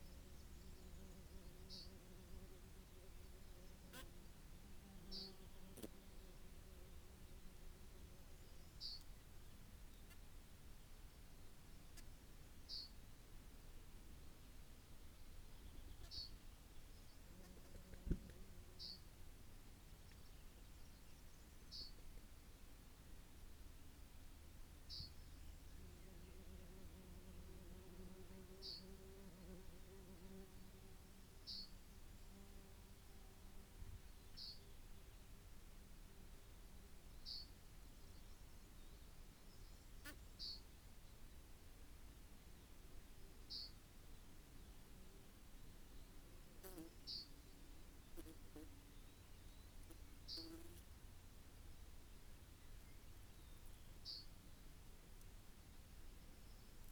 Green Ln, Malton, UK - grubbed out bees nest ...
grubbed out bees nest ... buff tipped bees nest ..? dug out by a badger ..? dpa 4060s in parabolic to MixPre3 ... parabolic on lip of nest ... bird calls ... song ... blackbird ... chaffinch ... skylark ... yellowhammer ... corn bunting ...
Yorkshire and the Humber, England, United Kingdom, 21 June, 08:20